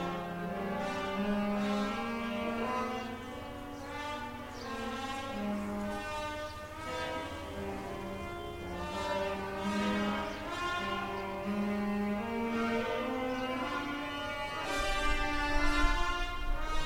Greece: Lerros: Lakki - Musikstunde/music lesson